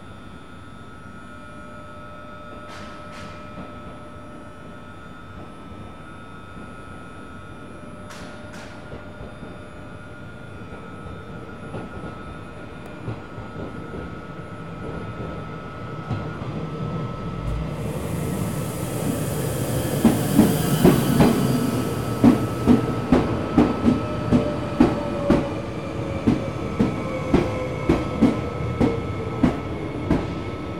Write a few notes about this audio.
train, station, message pass sanitaire info covid 19 sncf, passenger, captation Zoom H4n